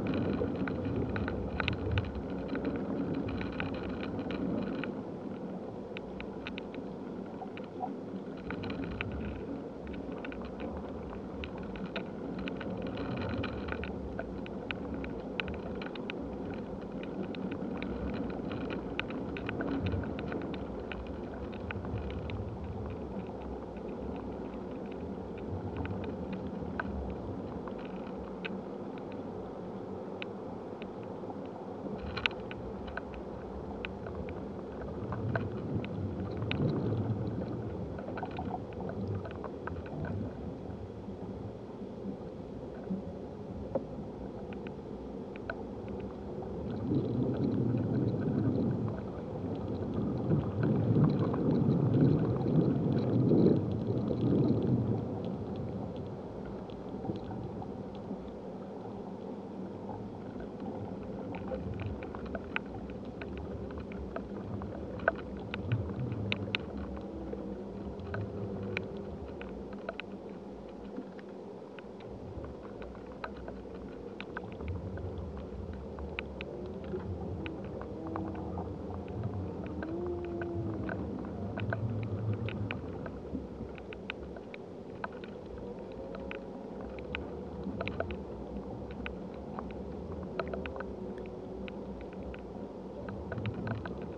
Utena, Lithuania, a dead tree in a wind
contact microphones in a dead tree trunk